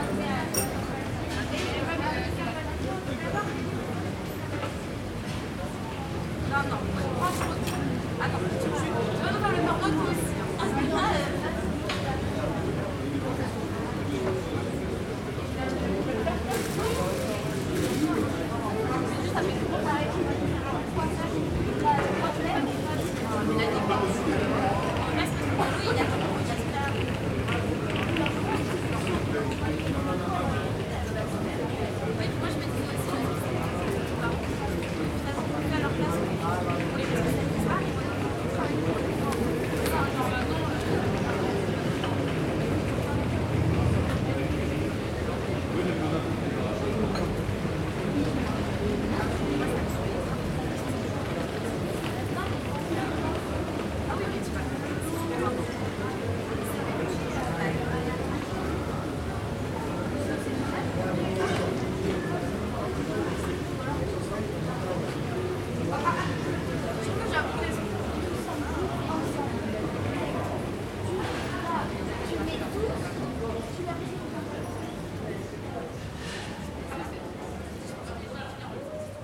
Fontaine de la Trinité, Pl. de la Trinité, Toulouse, France - coffee place
place, coffee, people talk, traffic, street, people walk
Occitanie, France métropolitaine, France, 5 November 2021, ~14:00